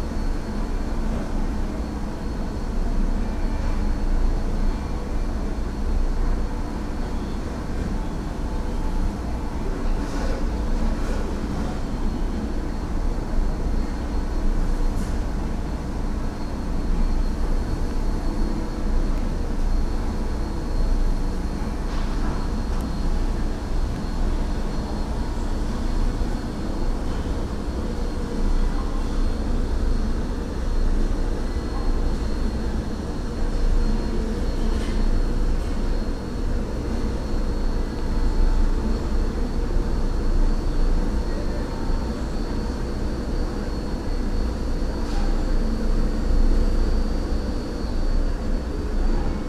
Muhlenberg College Hillel, West Chew Street, Allentown, PA, USA - Dining Hall Closing
The sound of the dining hall being cleaned caught my attention while walking by minutes after its closing. There is a lot of metalic clanking and the sound of the vacuum is a constant, almost soothing sound.